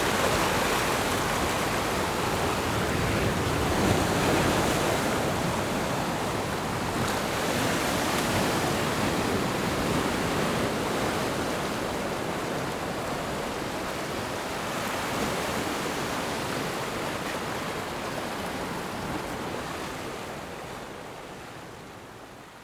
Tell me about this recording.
Sound of the waves, On the coast, Zoom H6 MS mic + Rode NT4